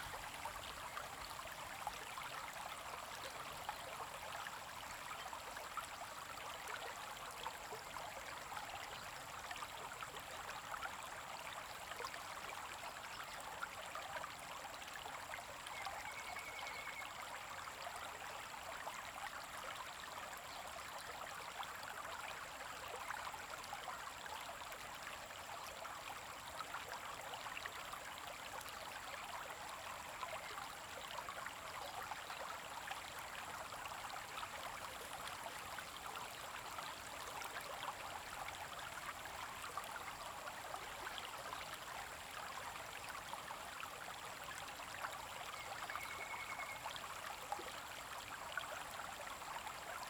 乾溪, 埔里鎮成功里 - Flow sound
Stream, River scarce flow
Zoom H2n MS+XY
26 April 2016, 12:50